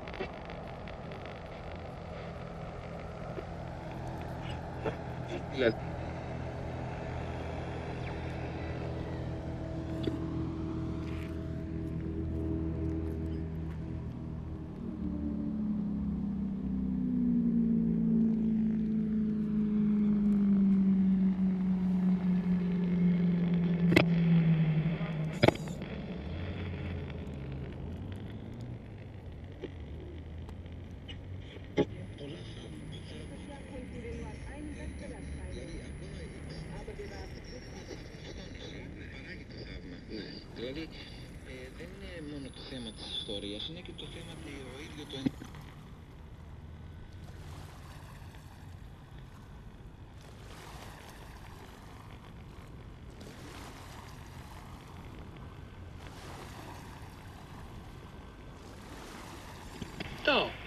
Smíchovská pláž
Radios on the Smíchov beach near Železniční most are being re-tuned in realtime according to sounds of Vltava - Moldau. Underwater sonic landscapes and waves of local boats turn potentiometers of radios. Small radio speakers bring to the river valley voices from very far away…